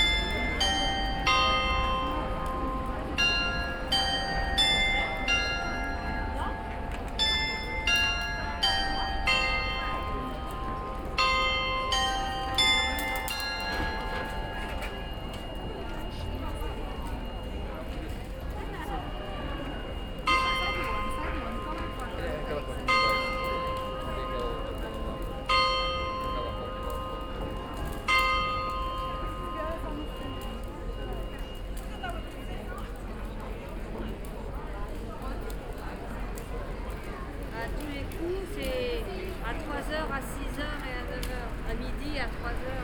Porto, R. de S. Catarina - bells
bells at 16:00